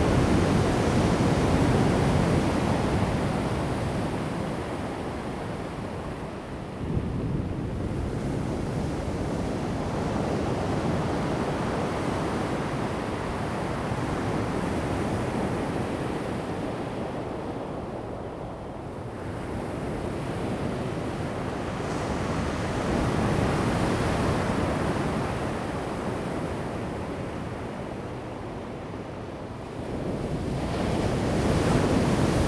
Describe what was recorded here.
At the beach, Sound of the waves, Zoom H2n MS+XY